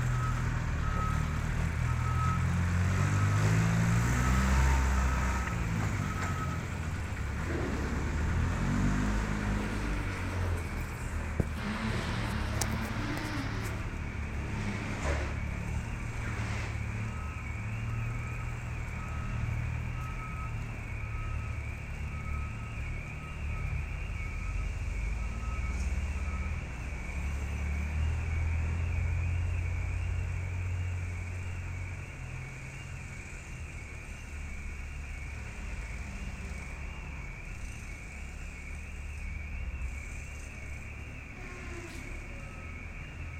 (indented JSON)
{
  "title": "Smithfield, Dublin, Ireland",
  "date": "2011-07-18 12:03:00",
  "description": "A public square in Dublin, Smithfield, that is supposed to be a quiet space, but is constantly under construction. Teenagers from the are that I have worked with have no memory of this square without the sounds of construction.",
  "latitude": "53.35",
  "longitude": "-6.27",
  "altitude": "21",
  "timezone": "Europe/Dublin"
}